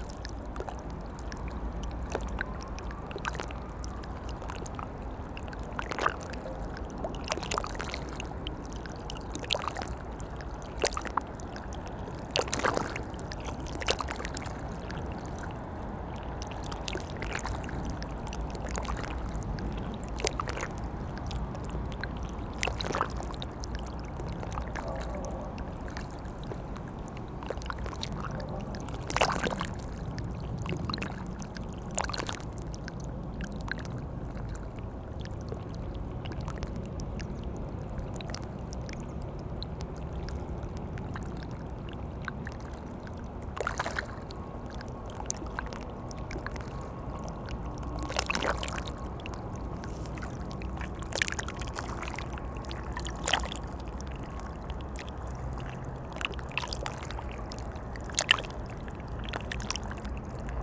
Soft river Seine flowing near the barges. It's an incredibly quiet place for Paris.